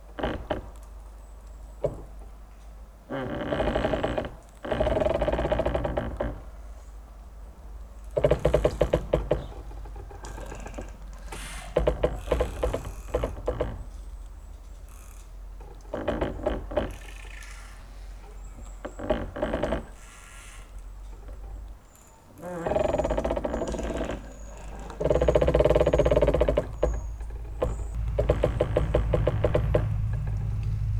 Lithuania, Vyzuonos, singing trees
some windfall and here are many pine-trees rubbing against each other